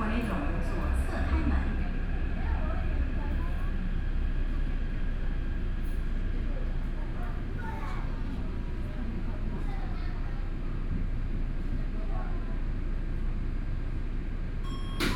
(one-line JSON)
{"title": "Sanchong District, New Taipei City - Luzhou Line (Taipei Metro)", "date": "2013-10-20 13:57:00", "description": "from Minquan West Road Station to Sanmin Senior High School Station, Binaural recordings, Sony PCM D50 + Soundman OKM II", "latitude": "25.07", "longitude": "121.49", "altitude": "7", "timezone": "Asia/Taipei"}